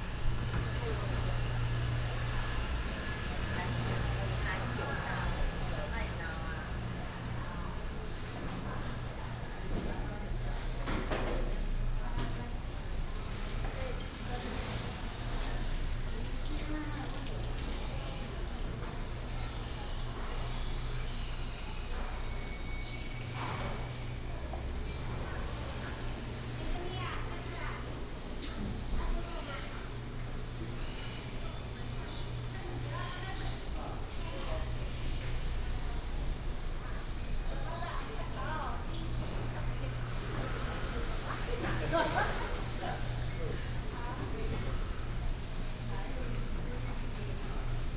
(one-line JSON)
{"title": "恆春公有市場, Hengchun Township - Public retail market", "date": "2018-04-02 16:10:00", "description": "In the Public retail market, traffic sound", "latitude": "22.00", "longitude": "120.75", "altitude": "23", "timezone": "Asia/Taipei"}